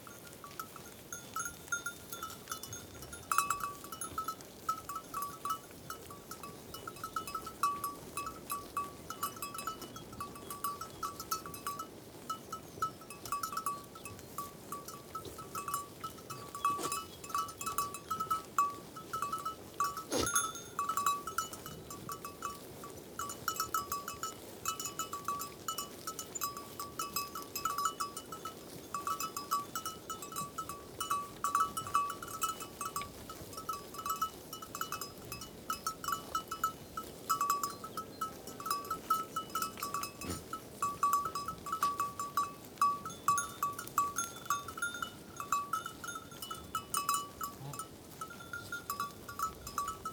Grizac, Pont-de-Montvert-Sud-Mont-Lozère, France - Chèvres de Philippe et Julien, Grizac . Lozère
Goats grazing in the meadows. Sometimes they are afraid and move in herds.
sound Device Mix Pre6II + Cinela Albert TRI DPA4022.
Occitanie, France métropolitaine, France, 6 April 2021